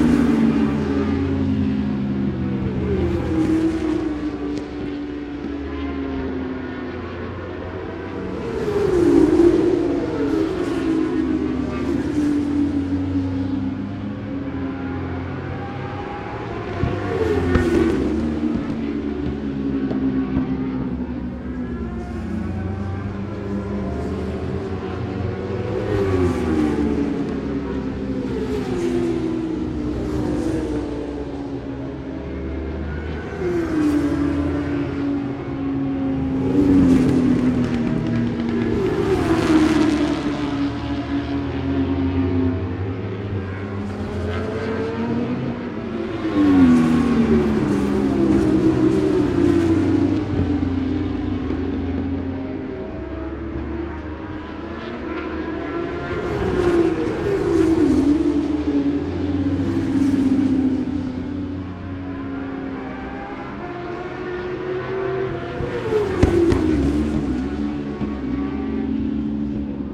Scratchers Ln, West Kingsdown, Longfield, UK - British Superbikes 2005 ... FP2 ...
British Superbikes 2005 ... FP 2 ... audio technica one point stereo mic ...